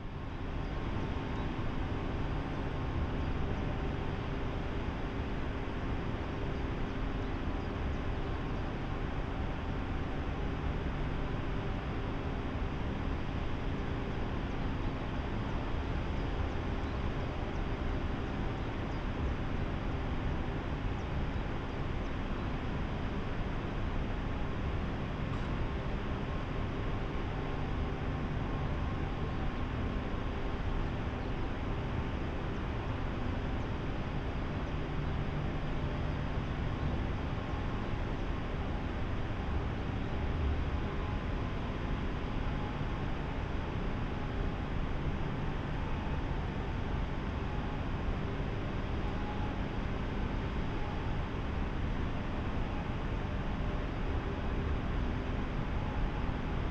{"title": "Neurath, Sinsteden - Neurath power station", "date": "2012-04-03 10:35:00", "description": "ambience near blocks BoA 2 and 3 of the newly built Neurath power station. signal and sound of passing deavy duty coal train.\n(tech: SD702, Audio Technica BP4025)", "latitude": "51.04", "longitude": "6.63", "altitude": "97", "timezone": "Europe/Berlin"}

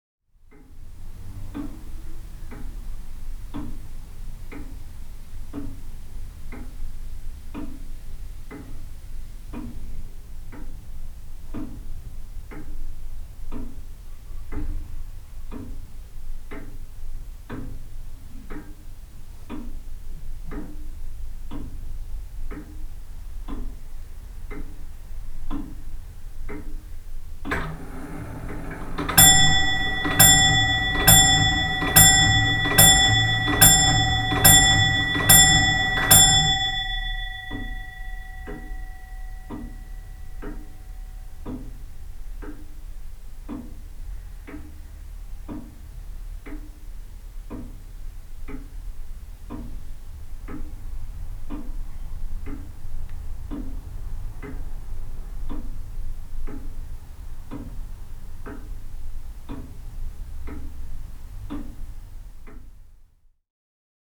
{"title": "Grandfather Clock, Hackney, London, UK - Time Passes", "date": "2020-07-05 09:00:00", "description": "A grandfather clock strikes 9am in a typical East End victorian house. MixPre 3 with 2 x Beyer Lavaliers", "latitude": "51.54", "longitude": "-0.06", "altitude": "21", "timezone": "Europe/London"}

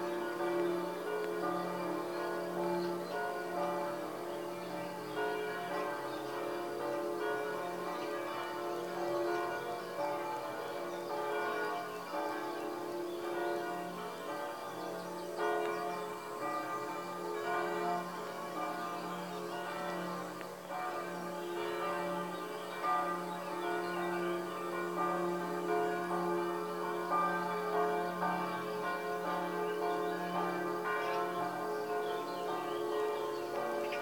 {"title": "Donzenac, France - Pentecôte à Donzenac", "date": "2015-05-25 12:00:00", "description": "La Pentecôte sonne au clocher XIIIème siècle de Donzenac, cité médiévale", "latitude": "45.23", "longitude": "1.52", "altitude": "206", "timezone": "Europe/Paris"}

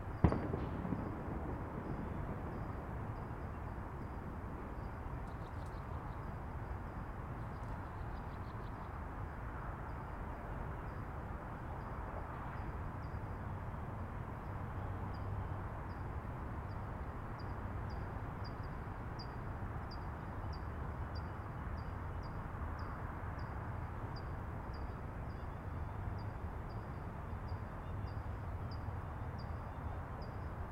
{"title": "Summit of Bernal Hill, Dawn World Listening Day", "date": "2011-07-18 05:00:00", "description": "Freeways US101 and I-280, left-over 4th of July fireworks in Mission District, California towhee? hummingbird? dark-eyed junco trills and tsits, mockingbird, mourning dove, ships whistle, American kestrel, fire engine sirens, joggers, World LIstening DAy", "latitude": "37.74", "longitude": "-122.41", "altitude": "137", "timezone": "America/Los_Angeles"}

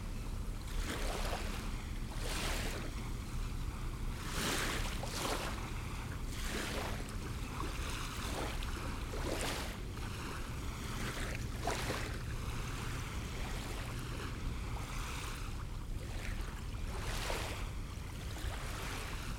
{"title": "Neutral Bay, NSW - Neutral Bay Wharf Beach", "date": "2015-12-22 21:22:00", "description": "Recorded using a pair of DPA 4060s and Earthling Designs custom preamps into an H6 Handy Recorder", "latitude": "-33.84", "longitude": "151.22", "altitude": "7", "timezone": "Australia/Sydney"}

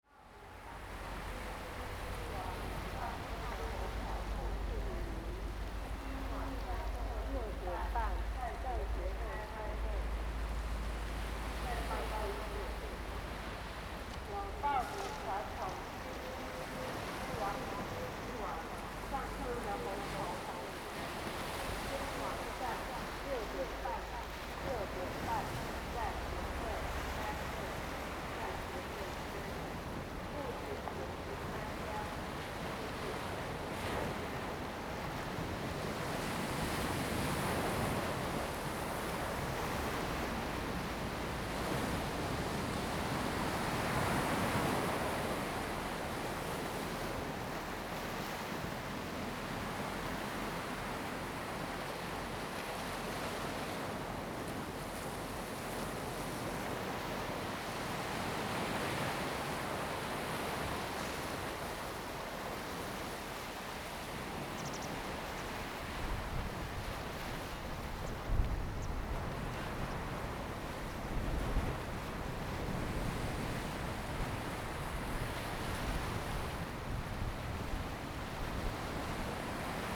{"title": "Koto island, Lanyu Township - In the beach", "date": "2014-10-29 17:30:00", "description": "In the beach, Sound of the waves, Tribal broadcast message\nZoom H2n MS +XY", "latitude": "22.03", "longitude": "121.55", "altitude": "10", "timezone": "Asia/Taipei"}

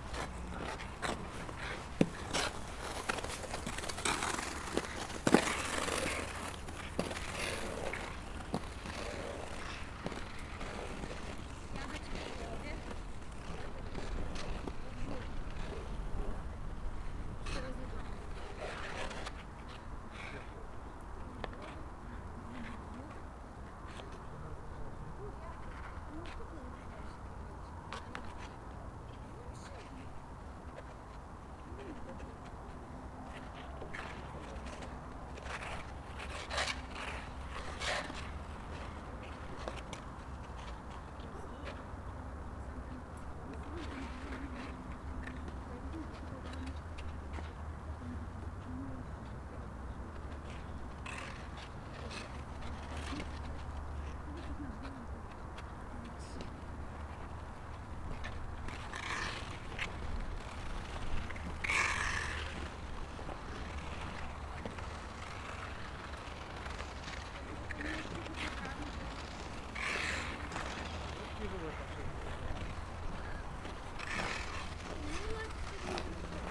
{"title": "Olsztyn, Polska - Ice skating (3)", "date": "2013-02-03 18:11:00", "description": "Ice skating from distance. Built-in mics Zoom H4n.", "latitude": "53.78", "longitude": "20.45", "altitude": "101", "timezone": "Europe/Warsaw"}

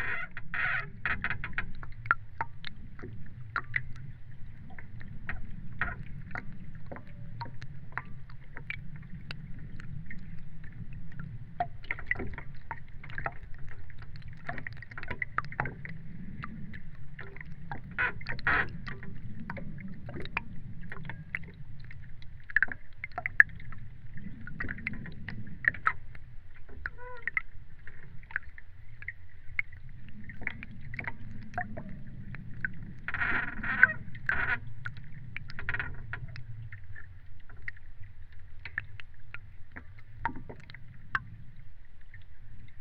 {"title": "Moletai, Lithuania, hydrophone", "date": "2019-03-31 15:40:00", "description": "hydrophone just right under the squeaking pontoon", "latitude": "55.23", "longitude": "25.44", "altitude": "151", "timezone": "Europe/Vilnius"}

